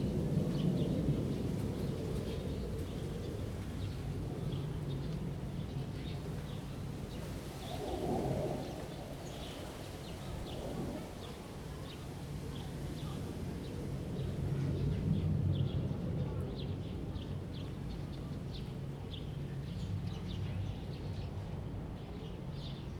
Fanshucuo, Shuilin Township - Distant fighter sound
Small village, the sound of birds, Distant fighter sound
Zoom H2n MS +XY